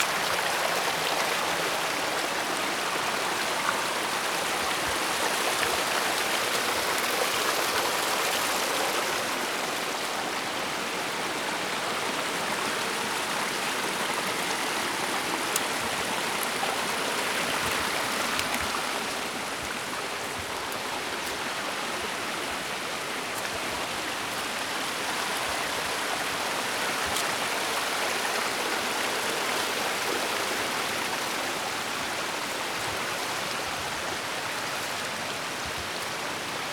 Feldberg, Feldsee - up the stream
walking up the hill, following intricate stream, spread onto many smaller veins. finally approaching a place where the water is really gushing.
Feldberg, Germany